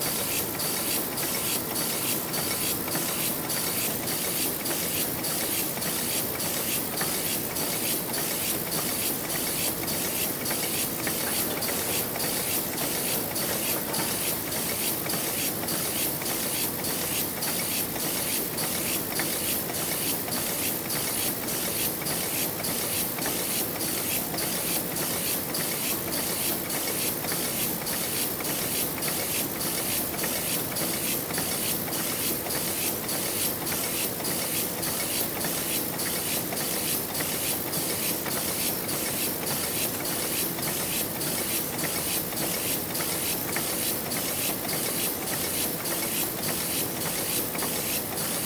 Williams Press, Maidenhead, Windsor and Maidenhead, UK - The sound of my book covers being printed
This is a longer recording of the Heidelberg Speedmaster printing 2,000 covers for my book, The KNITSONIK Stranded Colourwork Sourcebook.
2 October, 13:50